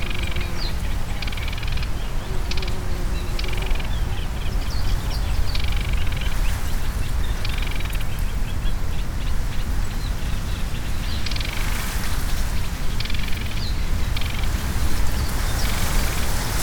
wetlands near Bargedzino village - wetlands ambience
ambience at the wetlands and peatbogs near Bargedzino village. (roland r-07)
powiat lęborski, pomorskie, RP, 10 June 2019, ~14:00